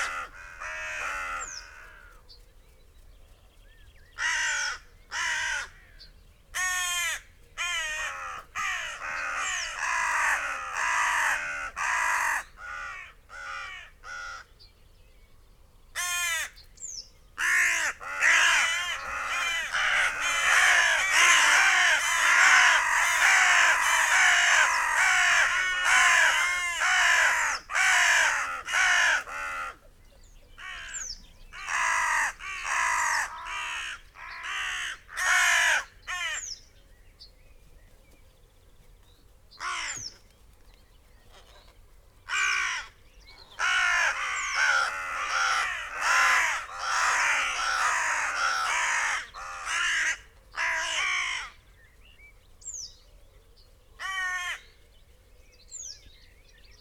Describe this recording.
crows ... cawing ... rasping ... croaking ... lavaler mics clipped to trees ... loose flock of crows flapped ... glided ... landed ... close to the mics ... bird calls ... song ... yellow wagtail ... whitethroat ...